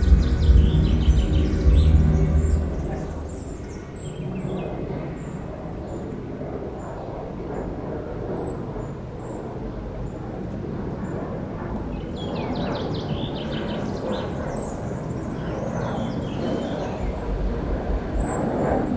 Au bord du Sierroz, essais de la sono du festival Musilac sur l'esplanade du lac du Bourget, avant cinq soirées fortes en décibels.